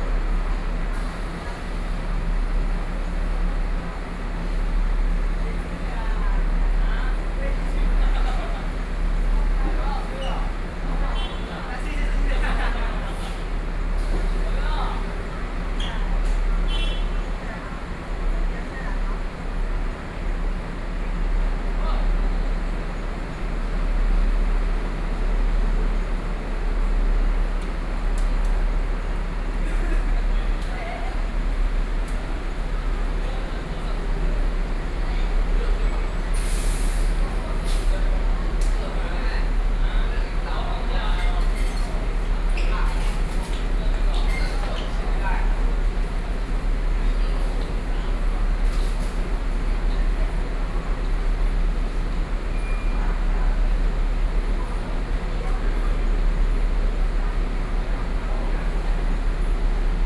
Zhongli City - Bus Terminus
in the Bus station hall, Sony PCM D50 + Soundman OKM II